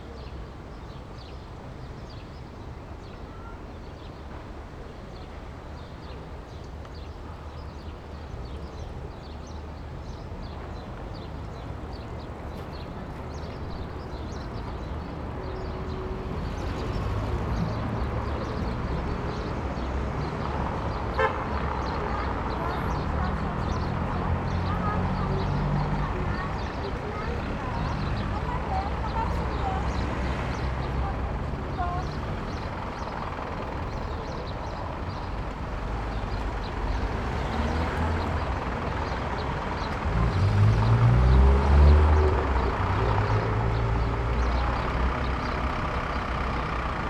Berlin: Vermessungspunkt Maybachufer / Bürknerstraße - Klangvermessung Kreuzkölln ::: 17.05.2011 ::: 17:59